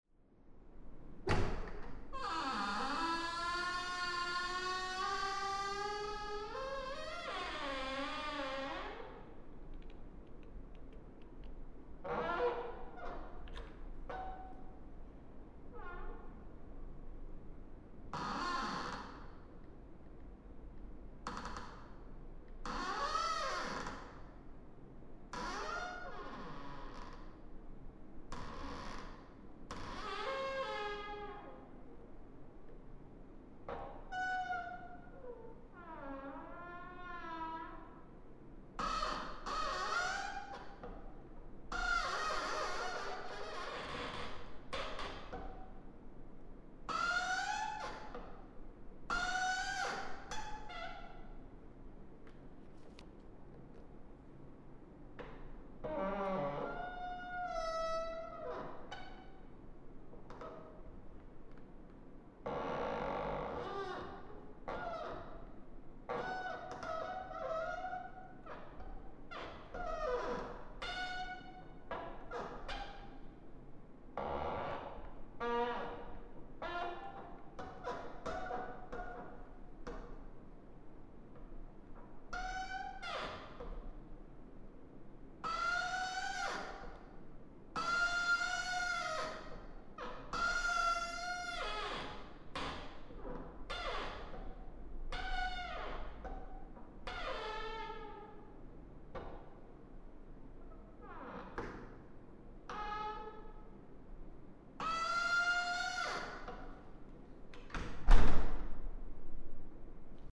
Krügerstraße, Mannheim, Deutschland - Favourite door C 2
Same door as C1 but recorded with a Sound Devices 702 field recorder and a modified Crown - SASS setup incorporating two Sennheiser mkh 20 microphones.
Baden-Württemberg, Deutschland